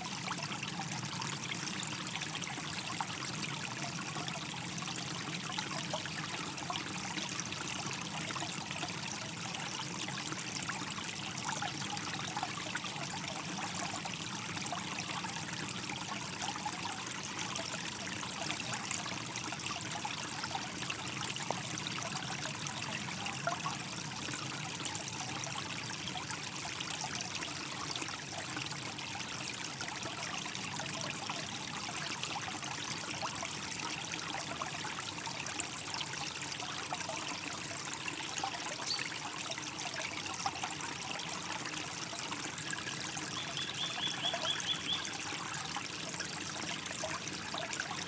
Rixensart, Belgique - Small stream
Into the Rixensart forest, sound of a small stream during the noiseless winter.
Rixensart, Belgium, 20 January 2019, 13:00